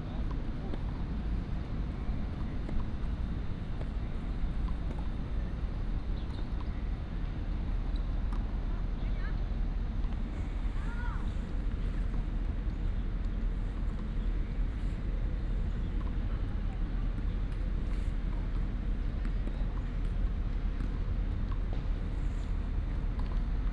In the playground, In the university
22 February, 11:50